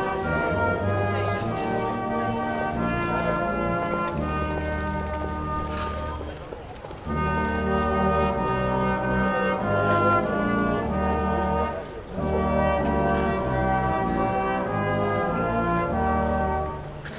København K, København, Danemark - Orchestra
Danish orchestra in the street, Zoom H6
København K, Denmark, 15 December 2016